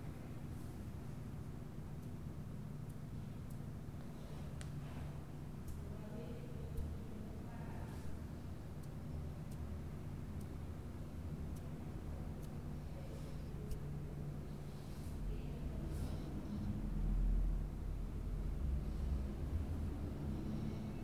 Av Wallace Simonsen - Nova Petrópolis, São Bernardo do Campo - SP, 09771-120, Brasil - Building ladder
This is a building ladder recorded at the second floor of a 25 floor building. It was recorded by a Tascam DR-05.
São Bernardo do Campo - SP, Brazil, 2 May 2019